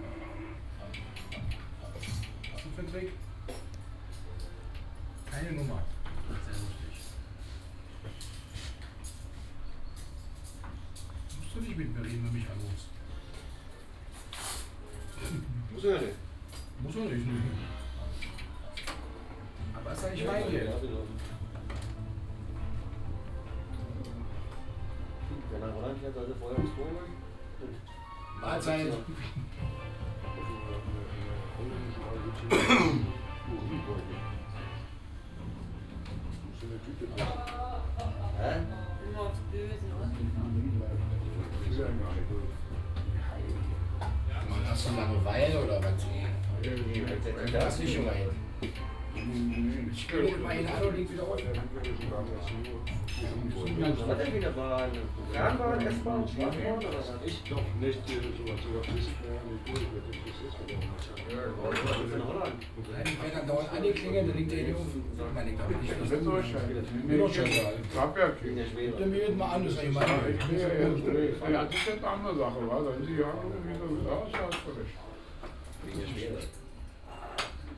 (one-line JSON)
{"title": "Berlin, Plänterwald, S-Bahncafe", "date": "2011-10-09 14:25:00", "description": "small pub at s-bahn station Plänterwald, a bit depresive atmosphere here. the station seems to be out of service.", "latitude": "52.48", "longitude": "13.47", "altitude": "32", "timezone": "Europe/Berlin"}